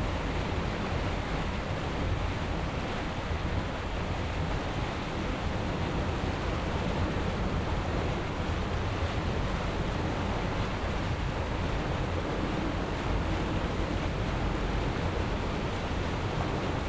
{"title": "Ecluse de Marchienne, Charleroi, België - Ecluse de Marchienne", "date": "2019-01-26 15:10:00", "description": "Boat passing through the canal lock, geese protesting loudly", "latitude": "50.42", "longitude": "4.40", "altitude": "106", "timezone": "GMT+1"}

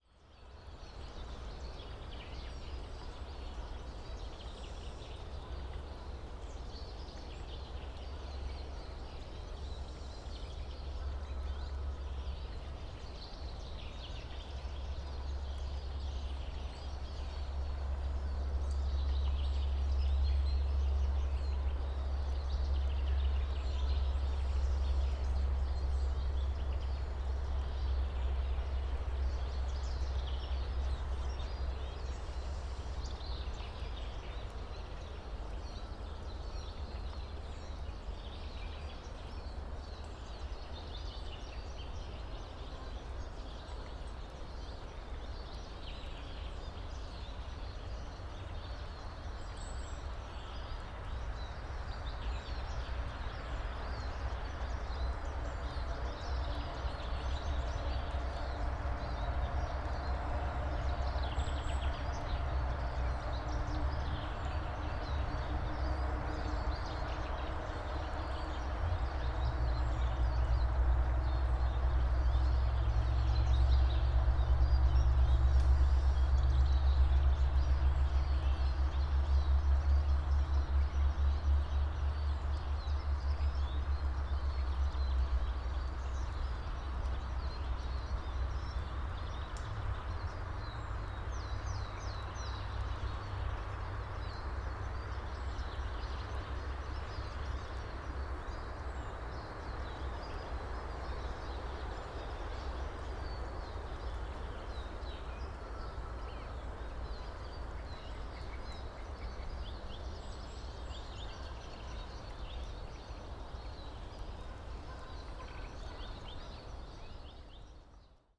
{"title": "birds and traffic, Skoki Poland", "date": "2011-03-29 16:25:00", "description": "patch of bird activity in the forest", "latitude": "52.69", "longitude": "17.17", "altitude": "93", "timezone": "Europe/Warsaw"}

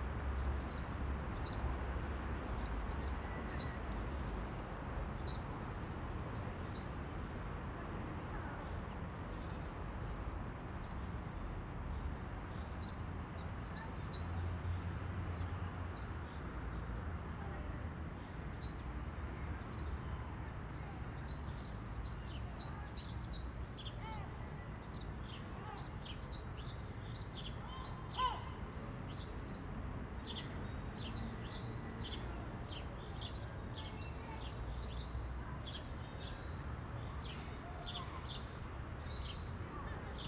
{"title": "Rijeka, Croatia, Natural History Museum - Natural History Museum", "date": "2013-04-01 16:40:00", "latitude": "45.33", "longitude": "14.44", "altitude": "35", "timezone": "Europe/Zagreb"}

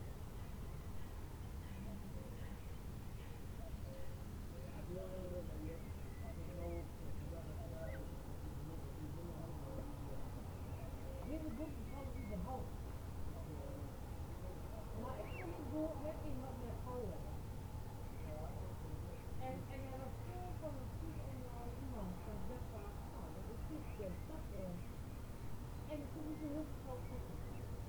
{"title": "workum, het zool: marina, berth h - the city, the country & me: marina", "date": "2011-06-26 22:36:00", "description": "young coot and cuckoo in the distance\nthe city, the country & me: june 26, 2011", "latitude": "52.97", "longitude": "5.42", "altitude": "1", "timezone": "Europe/Amsterdam"}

